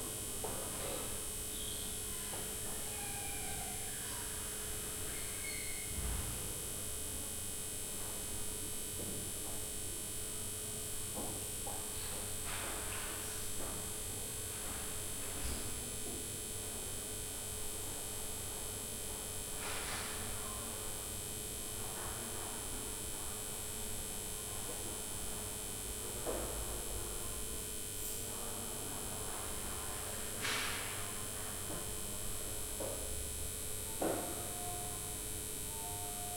Poznan, Piatkowo district, library entrance - lamp buzz
hypnotic buzz of the lamps in the main hall of the library + distant sounds of the activity in the building